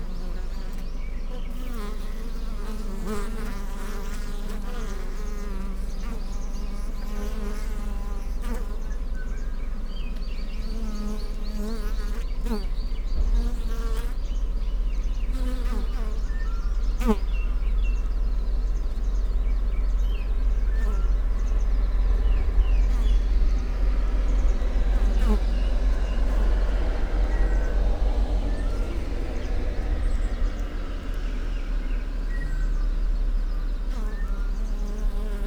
벌집 bee hive
벌집_bee hive...bees hiving in a log under a cliff-face on public land...of about 7 hives there is activity in only 1...there are also many other empty hives along this valley...contact mics hear a pulse inside the structure...condenser mics hear the avian activity in the valley as well as the noise from the nearby road and how it affects the hive...in a news article published this same week it is reported that 'Korean Beekeeping on the brink of collapse as 10 billion honeybees disappear'.
강원도, 대한민국